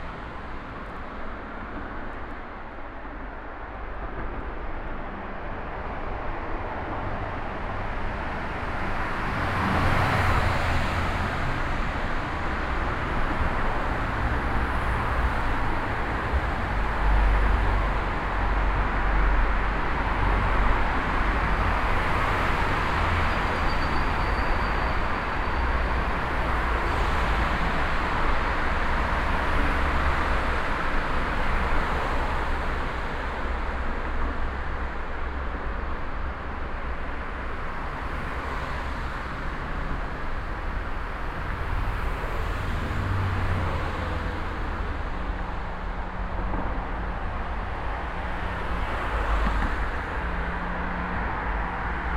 inside a traffic tunnel - the tube reflection of the passing traffic in the early afternoon
Projekt - Klangpromenade Essen - topographic field recordings and social ambiences
8 June 2011, ~22:00